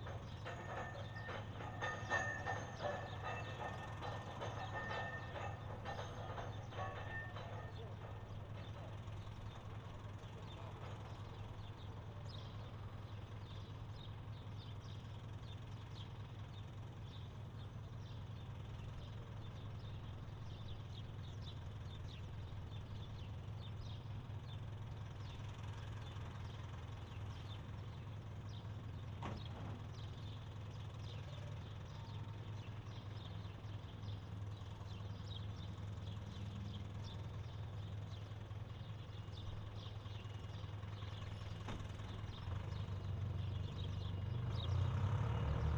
Ta'Zuta quarry, Dingli, Siġġiewi, Malta - quarry ambience
Ta'Zuta quarry, operates a ready mixed concrete batching plant and a hot asphalt batching plant, ambience from above
(SD702, AT BP4025)
Ħad-Dingli, Malta, 6 April 2017